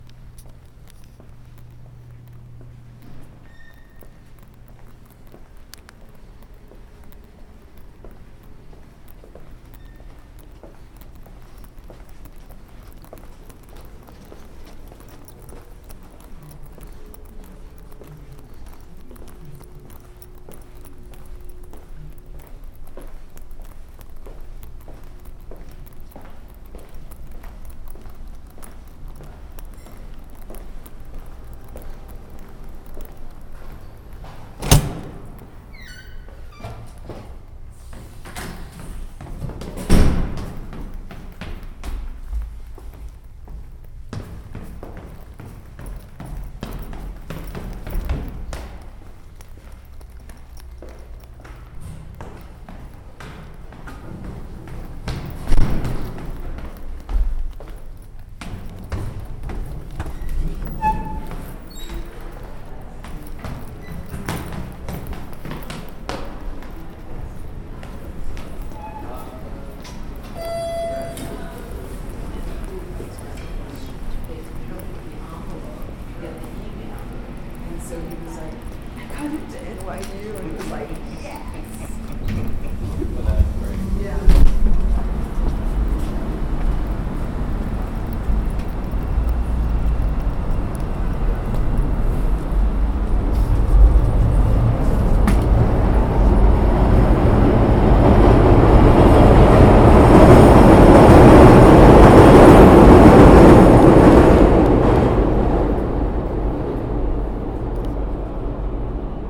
The Loop, Chicago, IL, USA - SAIC
I got into NYU! - SAIC students.